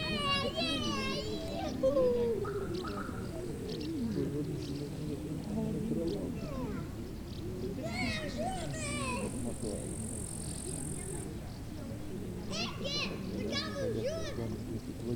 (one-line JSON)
{"title": "Lithuania, Tauragnai, at the lake Tauragnas", "date": "2012-08-03 18:25:00", "latitude": "55.44", "longitude": "25.82", "altitude": "172", "timezone": "Europe/Vilnius"}